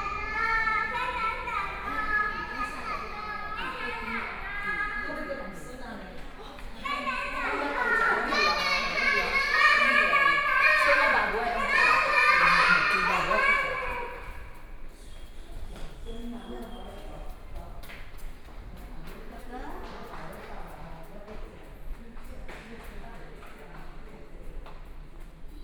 Kaohsiung International Airport Station, Taiwan - In the underpass
Walking in the station underpass
Xiaogang District, Kaohsiung City, Taiwan, 14 May, 09:37